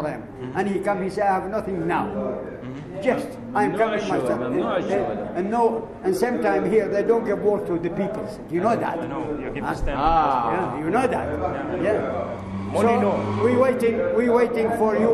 :jaramanah: :20 years in prison: - fourteen
18 October, ~19:00